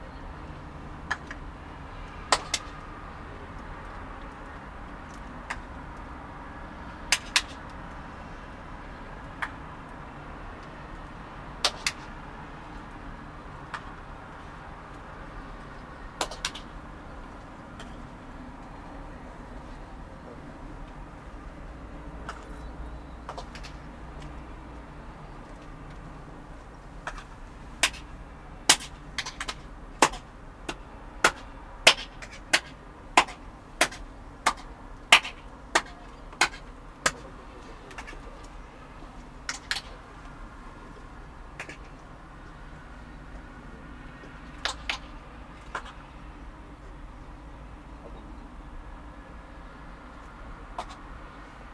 recording from the Sonic Surveys of Tallinn workshop, May 2010